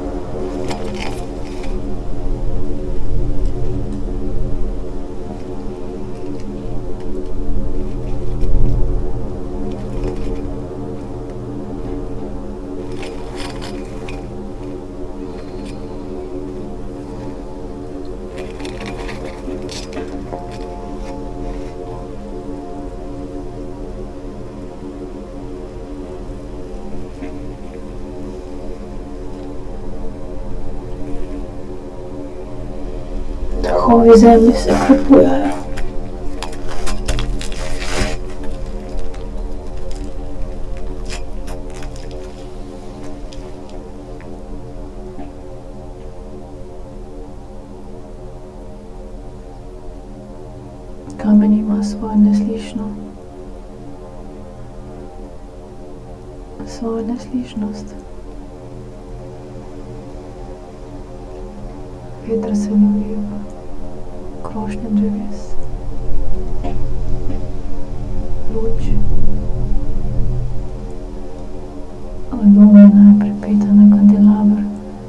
quarry, Marušići, Croatia - void voices - stony chambers of exploitation - borehole
air, wind, sand and tiny stones, broken reflector, leaves, flies, birds, breath, words and ... voices of a borehole
12 September 2012, 16:03